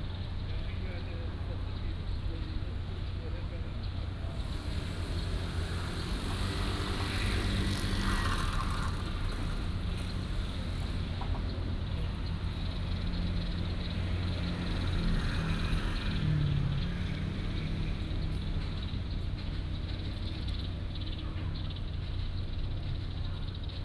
成功國宅, Taipei City - In the Plaza
In the Plaza Community